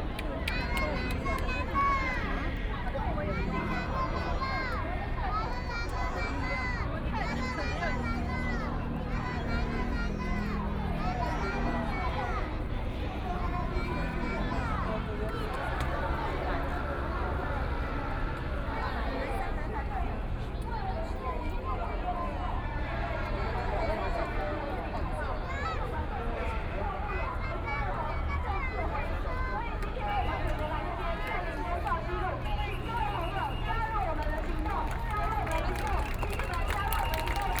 Zhongxiao W. Rd., Taipei City - Road corner
Protest actions are expected to be paralyzed major traffic roads, Opposition to nuclear power, Protest
Sony PCM D50+ Soundman OKM II
Zhongzheng District, Taipei City, Taiwan, 27 April 2014, 16:08